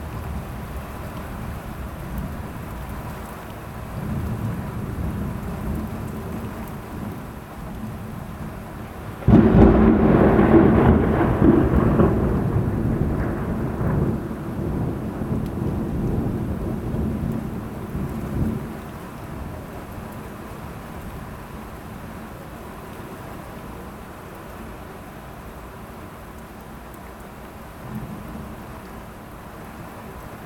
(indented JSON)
{
  "title": "Ave, Ridgewood, NY, USA - Severe Thunderstorm, NYC",
  "date": "2018-08-07 19:50:00",
  "description": "Recording of the severe thunderstorm that hit NYC after a hot and humid afternoon.\nContact mic placed on the apartment window + Zoom H6",
  "latitude": "40.70",
  "longitude": "-73.90",
  "altitude": "28",
  "timezone": "GMT+1"
}